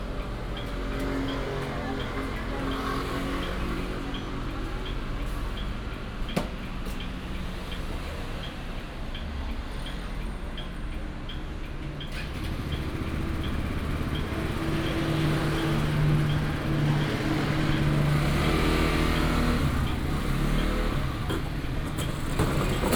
Outside the convenience store, Traffic sound, discharge
Binaural recordings, Sony PCM D100+ Soundman OKM II